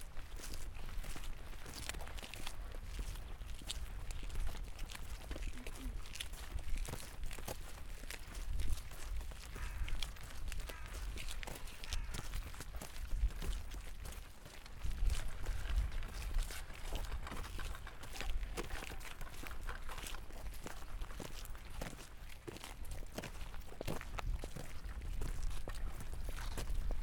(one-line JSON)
{"title": "Kalamaja park (former cemetery) - A sonic walk and deep listening to Kalamaja 2 (from Kai Center)", "date": "2019-11-03 15:57:00", "description": "Recorded with a Zoom H4N Pro, pointed at the ground while walking together with 17 other people\nA sonic walk and deep listening to Kalamaja - organised by Kai Center & Photomonth, Tallinn on the 3rd of November 2019.\nElin Már Øyen Vister in collaboration with guests Ene Lukka, Evelin Reimand and Kadi Uibo.\nHow can we know who we are if we don't know who we were?... History is not the story of strangers, aliens from another realm; it is the story of us had we been born a little earlier.\" - Stephen Fry", "latitude": "59.45", "longitude": "24.73", "altitude": "16", "timezone": "Europe/Tallinn"}